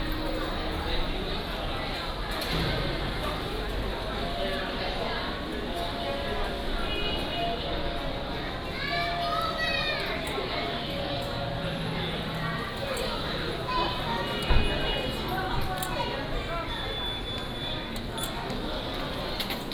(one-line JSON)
{"title": "彰化車站, Taiwan - To the station platform", "date": "2017-01-31 19:18:00", "description": "walking in the Station, From the station hall to the platform", "latitude": "24.08", "longitude": "120.54", "altitude": "16", "timezone": "GMT+1"}